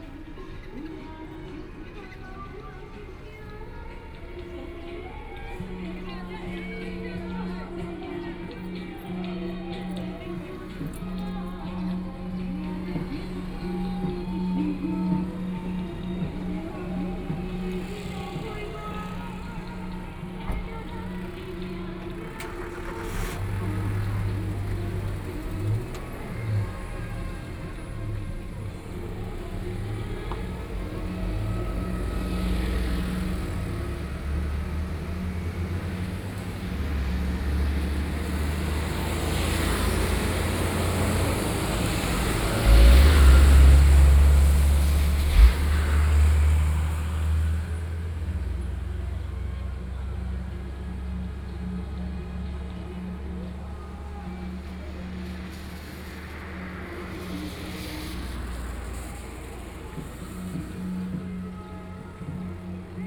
壯圍鄉復興村, Yilan County - Funeral
Funeral, Traffic Sound, At the roadside
Sony PCM D50+ Soundman OKM II